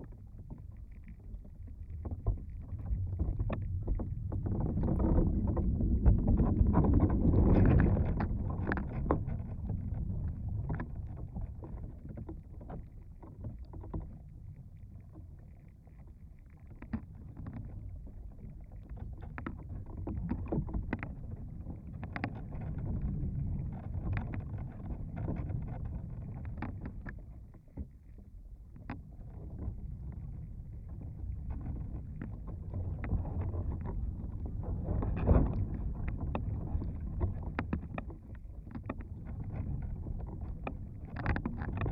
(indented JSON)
{
  "title": "stump tree, Vyzuonos, Lithuania",
  "date": "2019-09-23 17:20:00",
  "description": "dead tree listening with contact mics",
  "latitude": "55.58",
  "longitude": "25.47",
  "altitude": "111",
  "timezone": "Europe/Vilnius"
}